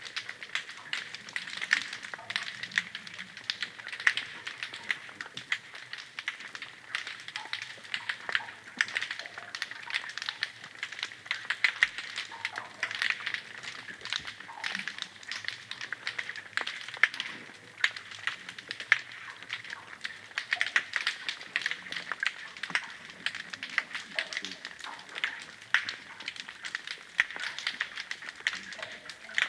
Loch Moidart - Alpheidae (pistol shrimp) Before a Storm
Recorded with an Aquarian Audio h2a hydrophone and a Sound Devices MixPre-3 (mono)
UK, April 2019